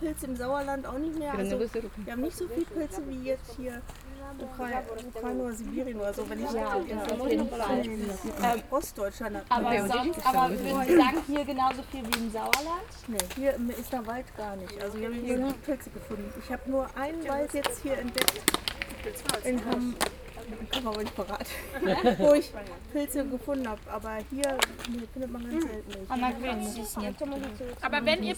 {"title": "Heessener Wald, Hamm, Germany - forest inter cultural", "date": "2022-07-19 15:35:00", "description": "Audio documentation of an excursion to the forest with Ukrainian women and children", "latitude": "51.71", "longitude": "7.84", "altitude": "82", "timezone": "Europe/Berlin"}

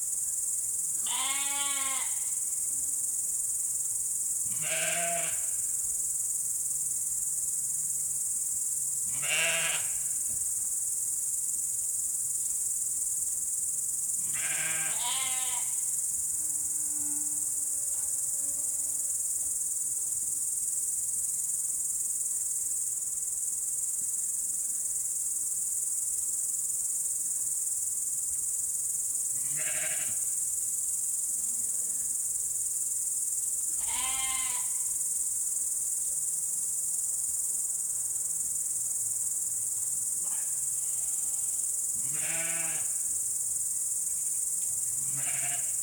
{"title": "Seliste crickets and sheep", "date": "2010-07-18 22:20:00", "description": "local sheep calling among the evening crickets", "latitude": "58.29", "longitude": "24.06", "altitude": "7", "timezone": "Europe/Tallinn"}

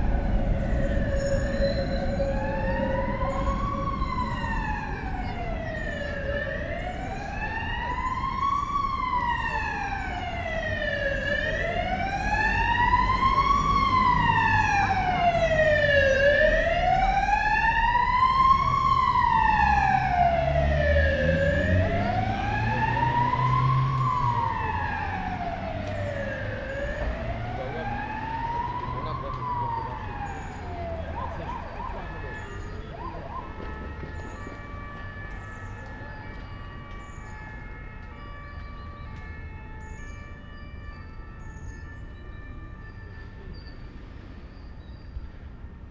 {"title": "Brussels, Altitude 100.", "date": "2009-09-20 10:37:00", "description": "Brussels, Altitude 100\nSunday Morning, day without cars in Brussels, jus a tram, an ambulance abd thé belles front the Saint-Augustin Church. Dimanche matin, le 20 septembre, à lAltitude 100. Cest la journée sans voitures mais il y aura quand même une ambulance, un bus et un tram. Et la volée de cloches de léglise Saint-Augustin, bien entendu.", "latitude": "50.82", "longitude": "4.34", "altitude": "104", "timezone": "Europe/Brussels"}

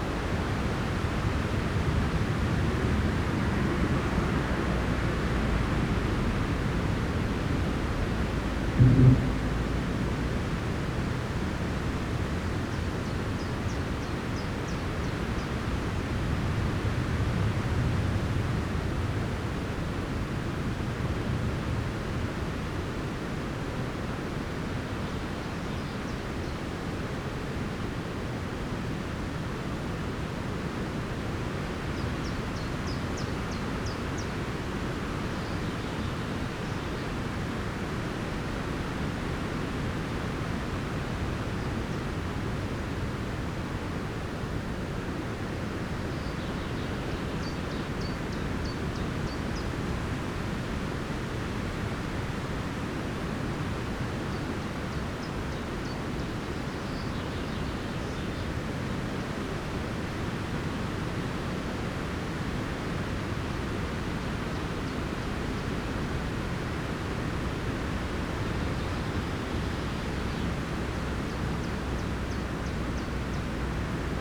{"title": "warns, skarl: small forest - the city, the country & me: trees swaying in the wind", "date": "2013-06-24 17:11:00", "description": "stormy day (force 7), trees swaying in the wind, cars driving over cattle grid\nthe city, the country & me: june 24, 2013", "latitude": "52.86", "longitude": "5.39", "altitude": "1", "timezone": "Europe/Amsterdam"}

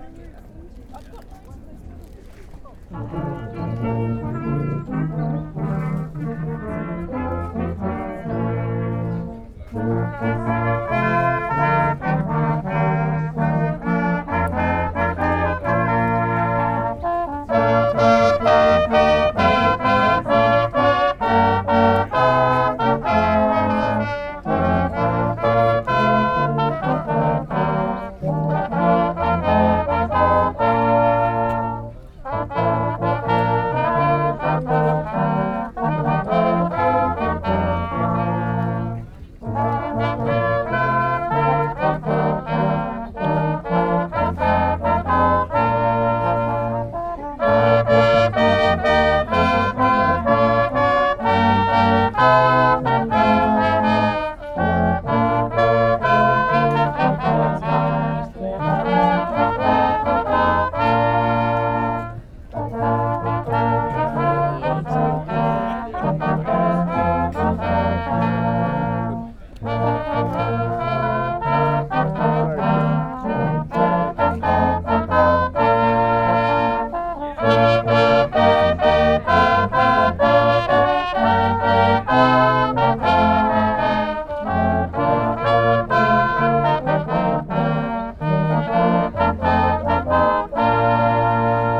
{"title": "Sunday Food Market, Victoria Park, London, UK - Brass Band", "date": "2019-12-28 15:25:00", "description": "This brass band started playing unexpectedly while I was walking through the food stalls in Victoria Park on a winter Sunday.\nMixPre 3 with 2 x beyer Lavaliers.", "latitude": "51.53", "longitude": "-0.05", "altitude": "18", "timezone": "Europe/London"}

